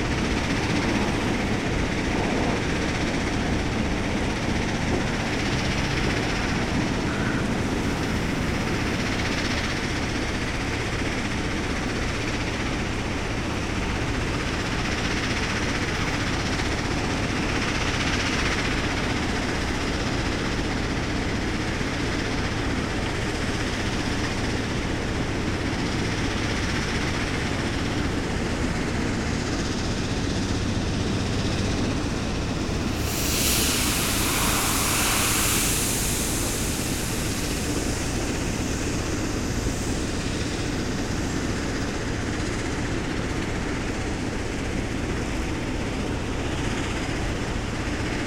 Marolles-sur-Seine, France - Sand quarry
Workers are extracting sand in a huge quarry.